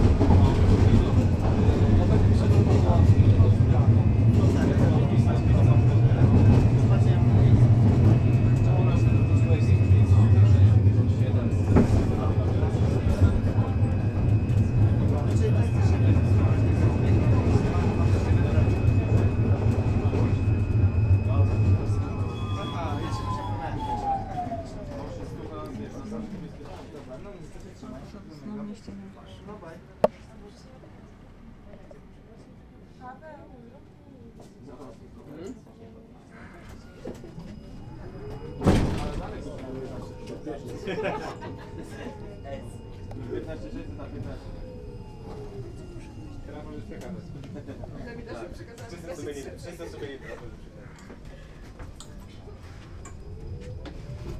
near Skytower, Wroclaw, Polen - Two Tramstops
Two tramstops direction downtown in beautiful Wroclaw, lat at night; machine & human voices talking on mobiles & chatting make the densest of timbre folds. "H2"
województwo dolnośląskie, Polska, European Union, 18 May, ~22:00